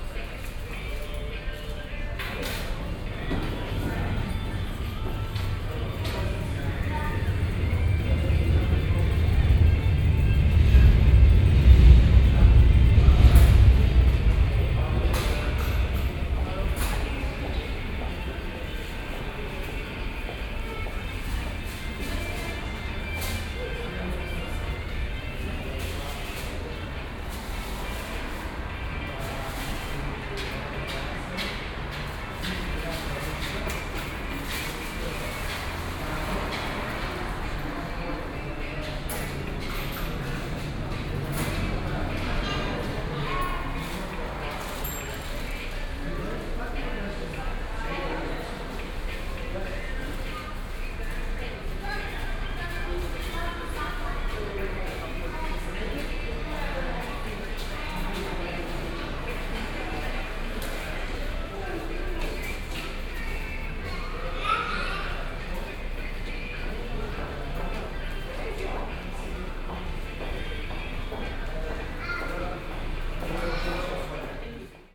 S+U Innsbrucker Platz - S+U Innsbrucker Platz, station entry
10.09.2008 16:20
S + U Bahn Innsbrucker Platz, entry area, 1 coffee + muffin, noisy radio at the coffee stand.
Berlin